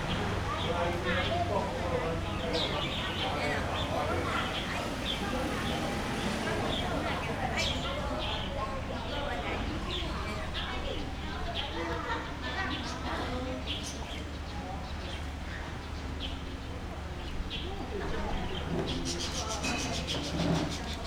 Aly., Ln., Wuhua St., Sanchong Dist. - Traditional old community
Traditional old community, Many older people in the chat, Birds singing, Traffic Sound
Rode NT4+Zoom H4n